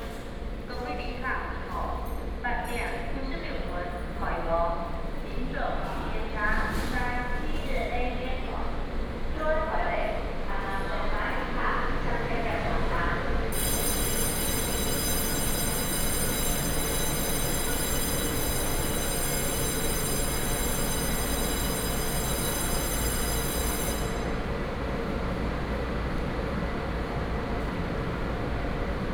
{"title": "Zhunan Station, 苗栗縣竹南鎮竹南里 - walk to the platform", "date": "2017-01-18 08:53:00", "description": "Train arrives and leaves, Station information broadcast, At the station platform", "latitude": "24.69", "longitude": "120.88", "altitude": "8", "timezone": "Asia/Taipei"}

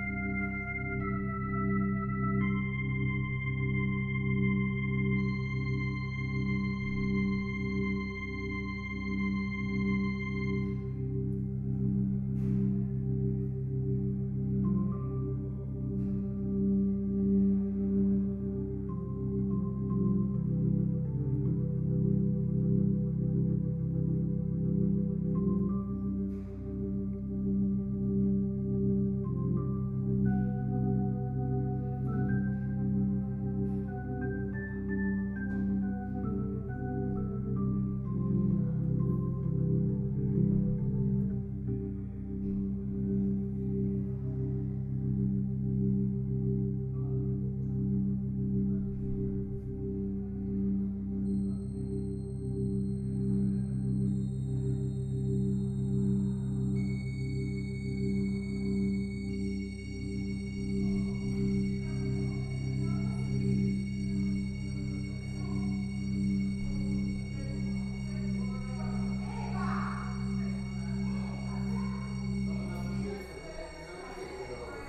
{"title": "kasinsky: a day in my life", "date": "2010-05-26 19:39:00", "description": "...pending actors, I find an electric piano...and play it...", "latitude": "42.86", "longitude": "13.57", "altitude": "158", "timezone": "Europe/Rome"}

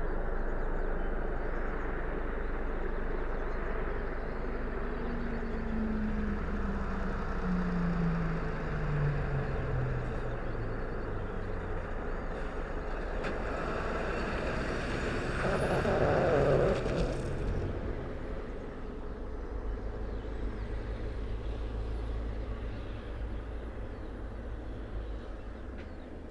{"title": "Main Rd, Malton, UK - dawn patrol ... valley bottom ...", "date": "2020-06-02 04:57:00", "description": "dawn patrol ... valley bottom ... police helicopter on its way ... parabolic to mixpre 3 ... a lorry turns at the T junction the tyres complaining ... bird calls ... song ... skylark ... whitethroat ... song thrush ...", "latitude": "54.12", "longitude": "-0.53", "altitude": "75", "timezone": "Europe/London"}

